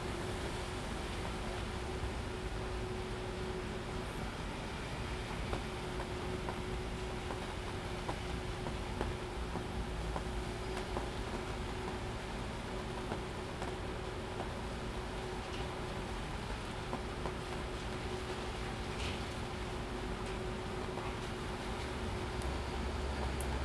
Salvador, Bahia, Brazil - Roadworks and Thunder, pre World Cup 2014
Recorded from the 5th floor of my apartment, in Barra, Salvador in Brazil. The seemingly never ending roadworks are in full swing in this World Cup 2014 host city. There are growing doubts that they will be finished in time. They say that all will be completed, but in the Brazilian way. With the paint still wet....The roadworks can be heard, as always, along with the omnipresent shore-break. The thunder is starting to roll in, as we are now in the rainy season. The ominous soundscape mirrors the growing unrest in the country, at this; "their" World Cup. Only 20 days to go.....
22 May 2014, 14:15